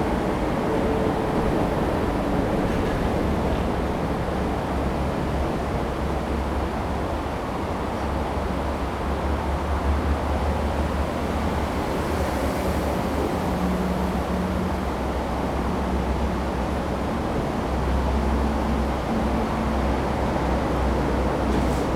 Dazun Rd., Zhongli Dist. - Under the highway
Under the highway, stream, traffic sound
Zoom H2n MS+XY
Zhongli District, Taoyuan City, Taiwan, August 2017